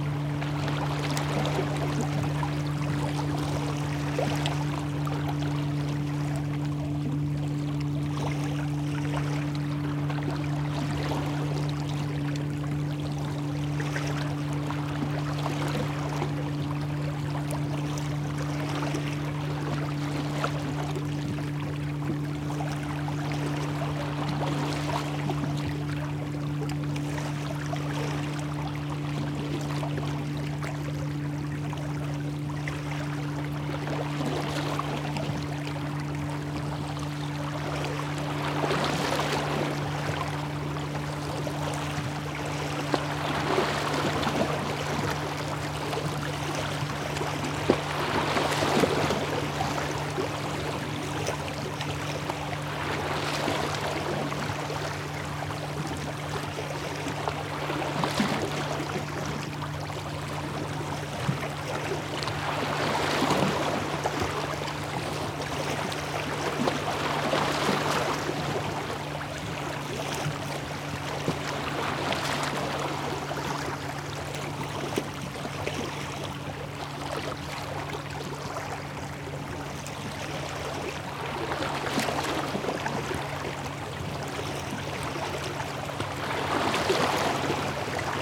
Audible signal Hurtigruten ship - Kirkenes, Norway - Audible signal Hurtigruten ship
Audible signal Hurtigruten ship.
Звуковой сигнал круизного лайнера компании Hurtigruten. Заходя в порт, судно подаёт звуковой сигнал, который громогласным эхом разноситься над фьордом. Это длинная запись (более 17 мин), начинается со звукового сигнала судна, затем идёт лёгкий плеск волн. Примерно на 5-й минуте до берега доходят большие волны от судна «Хуртигрутен». В течении 10 минут на берег накатывают волны, и к концу записи снова воцаряется штиль.
2 February 2016